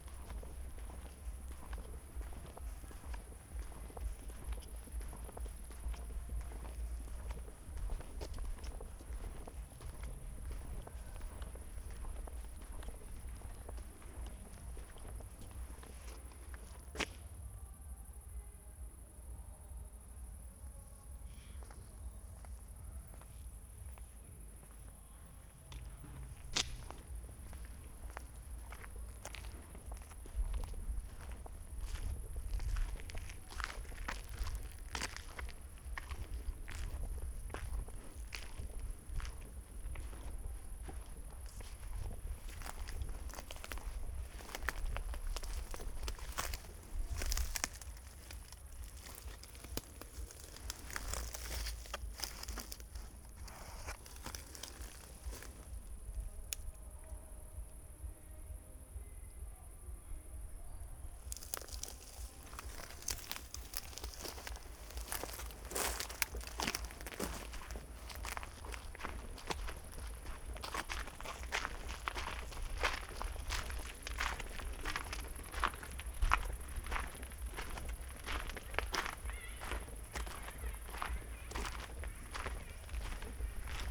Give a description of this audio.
Bestensee, Saturday summer evening, walking around Thälmannstr, (Sony PCM D50, Primo EM172)